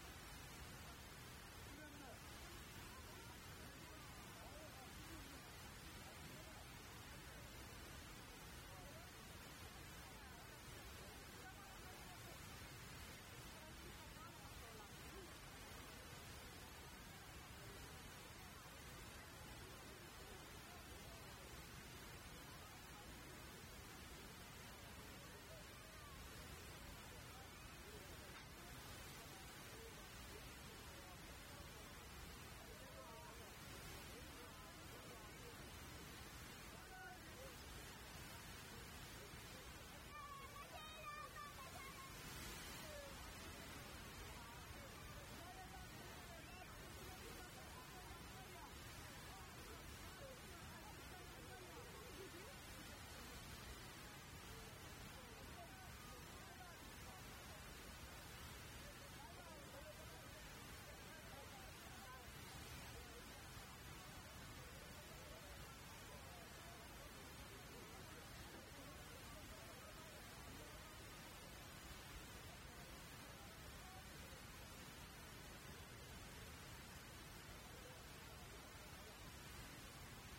Pretorialaan, Rotterdam, Netherlands - Markt Afrikaanderplein
Wednesday´s market. Recorded with binaural Soundman mics
29 December 2021, 14:00